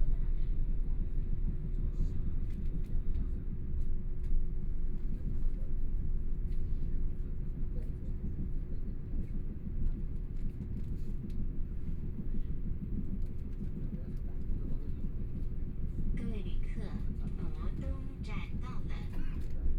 Wujie Township, Yilan County - Tze-Chiang Train
from Yilan Station to Luodong Station, Binaural recordings, Zoom H4n+ Soundman OKM II